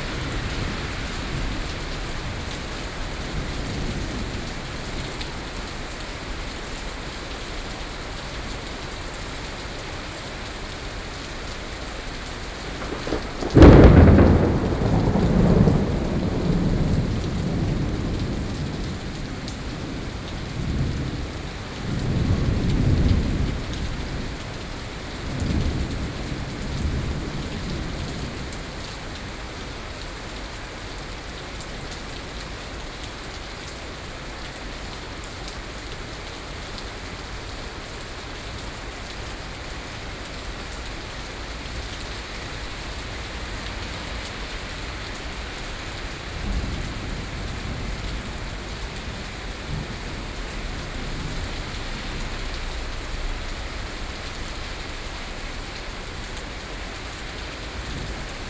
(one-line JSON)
{"title": "Zhongzheng District, Taipei - Thunderstorm", "date": "2013-07-06 15:23:00", "description": "Traffic Noise, Thunderstorm, Sony PCM D50, Binaural recordings", "latitude": "25.05", "longitude": "121.53", "altitude": "24", "timezone": "Asia/Taipei"}